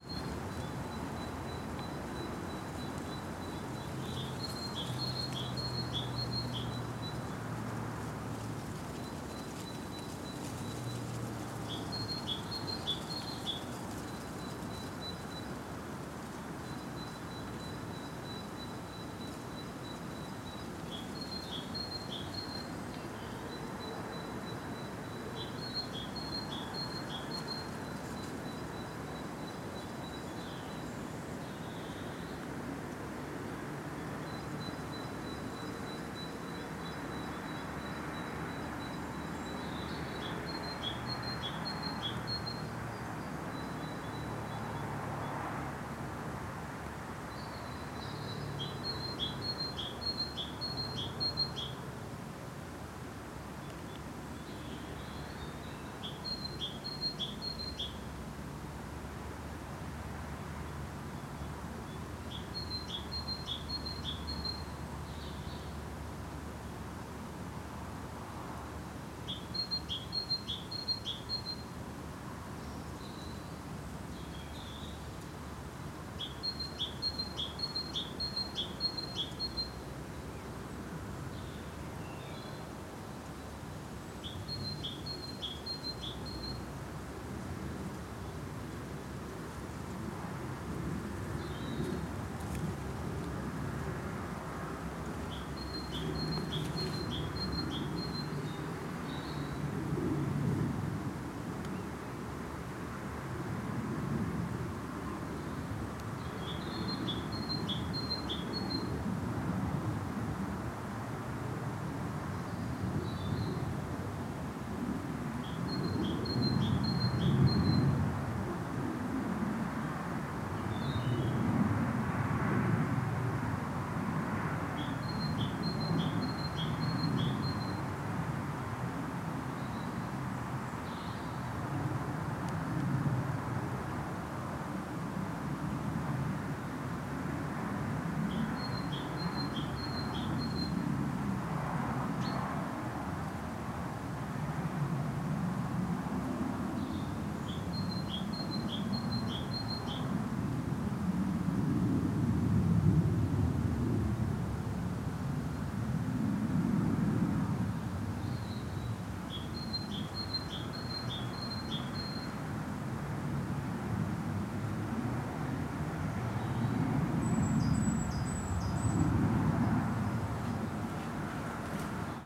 Salèrnes, France - Quarry bird, Carrière Trichard
Ambience recorded in a seldom used quarry. Wind rustling some leaves and a persistent bird loop.
Recorded on a Zoom H4n internal mics.